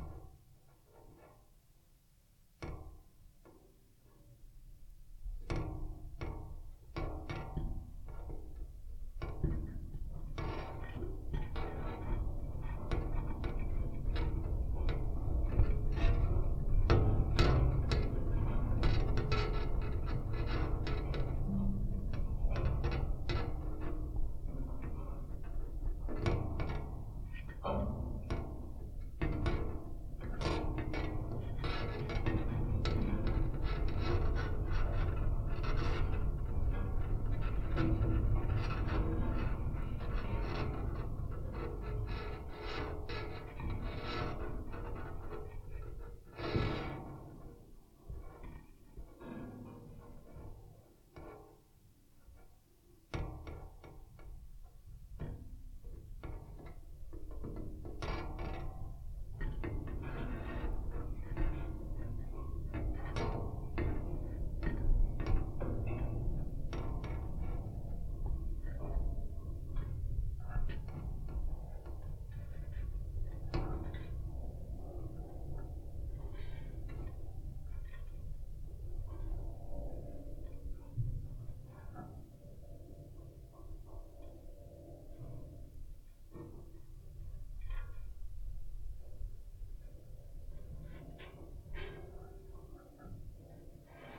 Oscar's Loop, Bentonville, Arkansas, USA - Coler Fence
Recording from 2 contact mics attached to wire fence just off Oscar's Loop Trail in Coler Mountain Bike Preserve.